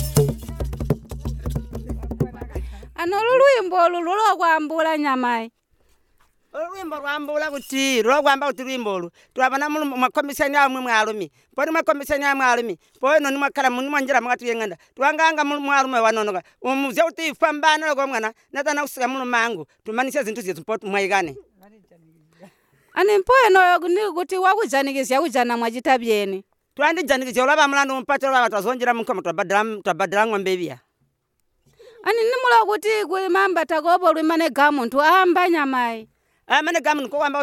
Kariyangwe, Binga, Zimbabwe - Playing Chilimba...
Chilimba is a traditional form of entertainment among the Batonga. Playing Chilimba involves a group of people, often women, in joyful singing, drumming and dancing. The lyrics of the Chilimba songs may however also contain teachings, such as here, “don’t fall in love with a married person”.
In contemporary Chitonga, the word “chilimba” also means “radio”.